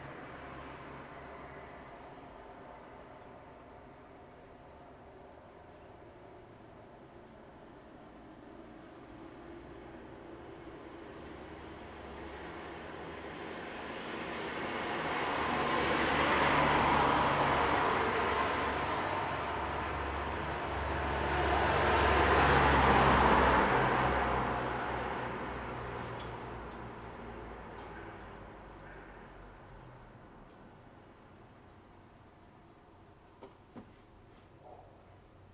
A typical day in the neighourhood. Cars passing by, pedestrians walking, dogs barking... Recorded with Zoom H2n (MS, on a tripod).